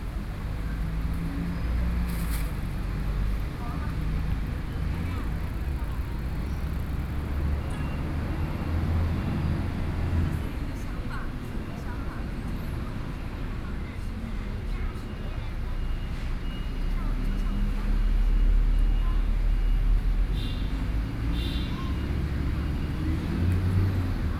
Taipei, Taiwan - in the park
Xinyi District, Taipei City, Taiwan